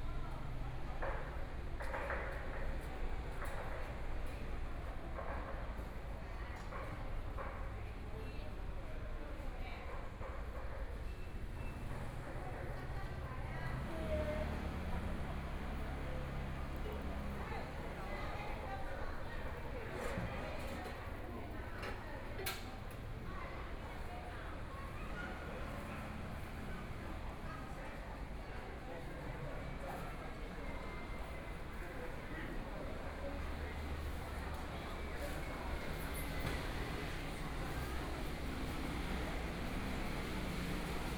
花蓮市國富里, Taiwan - Walking through the market
Walking through the market, Traffic Sound
Binaural recordings
Zoom H4n+ Soundman OKM II